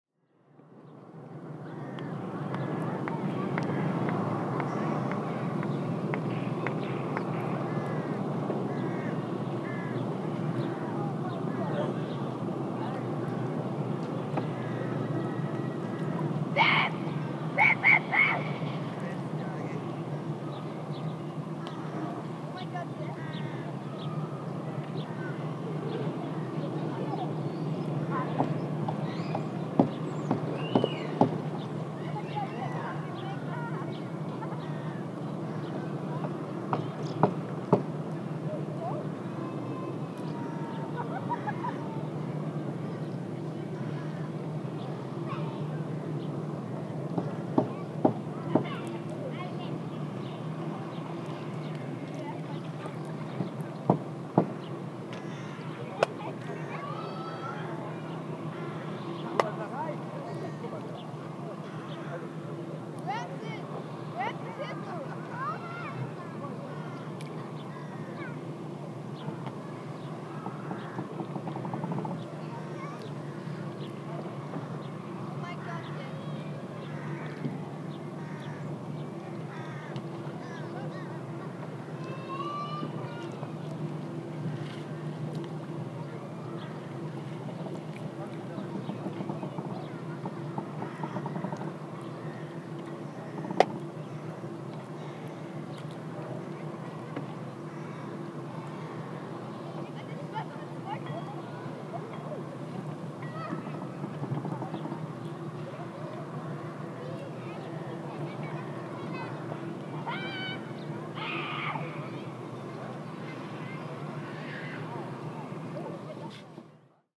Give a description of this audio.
Recordist: Michela Pegurri. Recorded on a sunny day at the end of the peer. Sea gulls, kids playing with a ball in the distance and waves. Recorded with ZOOM H2N Handy Recorder.